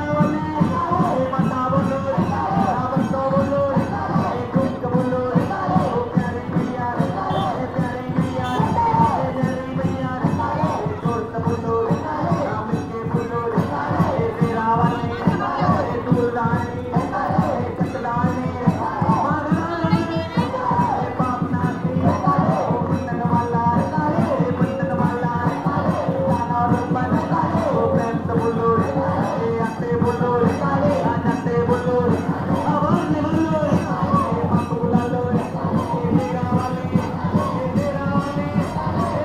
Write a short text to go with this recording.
The whole city celebrate the Goddess Durga. Music, plays, fair, circus, market, food, and crowds of Piparya.